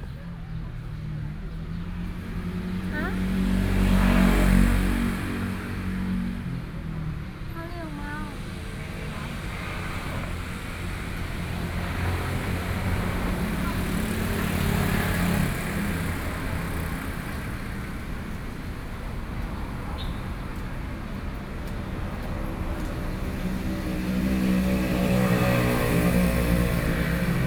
In the library next to the sidewalk, Sony PCM D50 + Soundman OKM II
12 August 2013, ~13:00